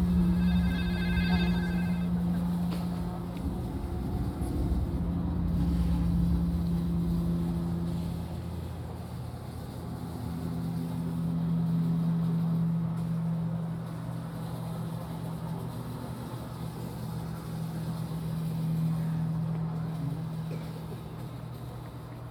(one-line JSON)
{"title": "Karls-Aue, Kassel, Deutschland - Kassel, Karlsaue, sound installation", "date": "2012-09-12 16:50:00", "description": "In a small wood inside the Karlsaue during the documenta 13. The sound of a hörspiel like multi channel sound installation by Janet Cardiff and George Bures Miller. Also to be heard photo clicks of visitors and a child crying.\nsoundmap d - social ambiences, art places and topographic field recordings", "latitude": "51.30", "longitude": "9.49", "altitude": "150", "timezone": "Europe/Berlin"}